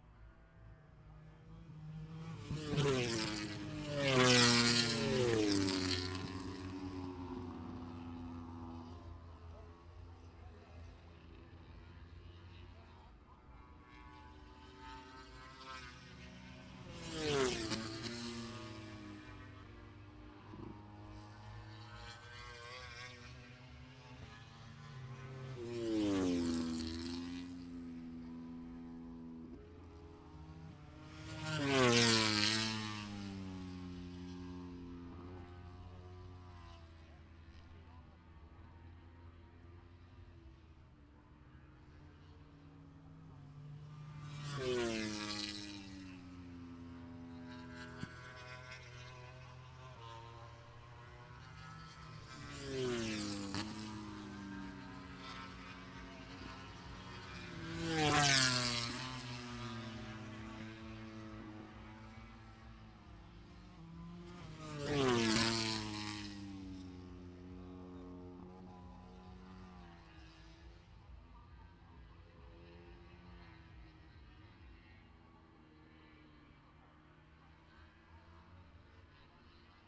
Silverstone Circuit, Towcester, UK - british motorcycle grand prix 2019 ... moto grand prix ... fp2 ...
british motorcycle grand prix 2019 ... moto grand prix ... free practice two ... maggotts ... lavalier mics clipped to bag ... background noise ...
August 2019